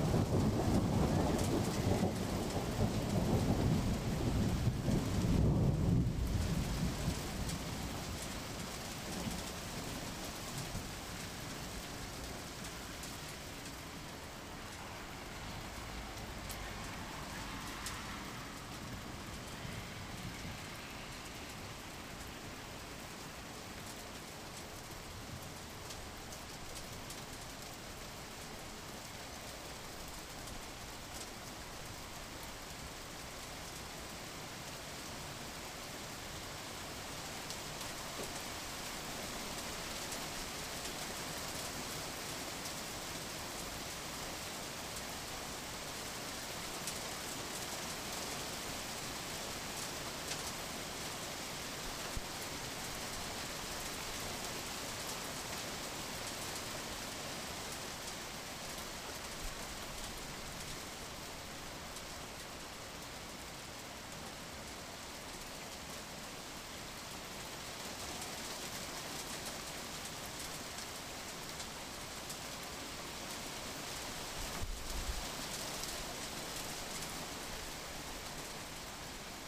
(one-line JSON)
{"title": "1919 7th street Berkeley", "date": "2011-03-19 00:24:00", "description": "sound of ice hail, passing train and storm, all of that while listening Crawl Unit / Drone 2", "latitude": "37.87", "longitude": "-122.30", "altitude": "8", "timezone": "US/Pacific"}